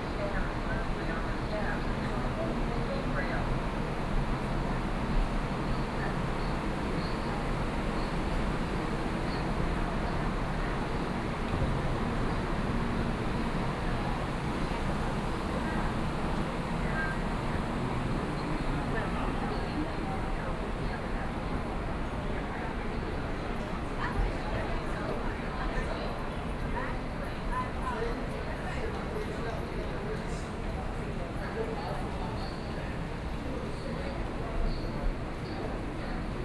Zuoying Station, Kaohsiung City - Walking through the station
Walking in the station hall, Kaohsiung Mass Rapid Transit
Sony PCM D50+ Soundman OKM II